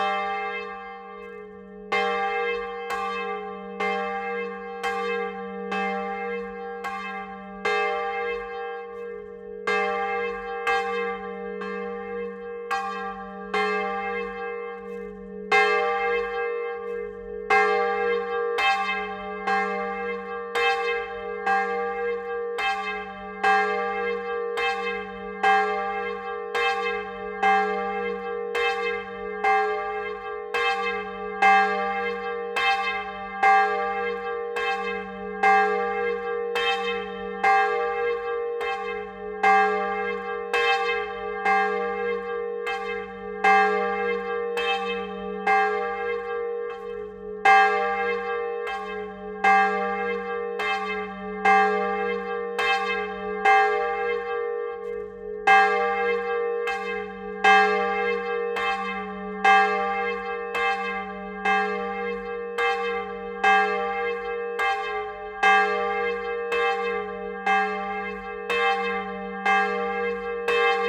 Rue Jean Jaurès, Haspres, France - Haspres - Département du Nord église St Hugues et St Achere - volée cloche Aîgüe.
Haspres - Département du Nord
église St Hugues et St Achere
volée cloche Aîgüe.
Hauts-de-France, France métropolitaine, France, 19 April 2021, ~11:00